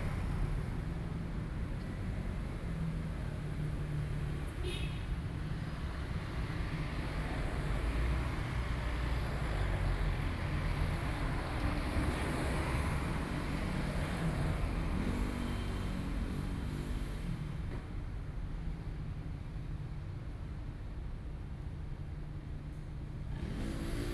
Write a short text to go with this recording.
Traffic, Sitting on the ground, Sony PCM D50 + Soundman OKM II